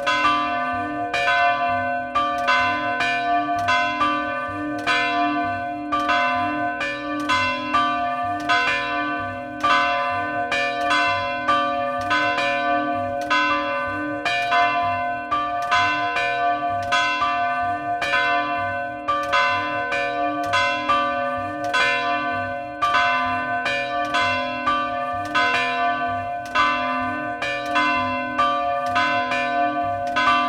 Rue de l'Église, Fontaine-Simon, France - Fontaine Simon- Église Notre Dame
Fontaine Simon (Eure et Loir)
Église Notre Dame
Tutti Mix
17 November 2019, 11:00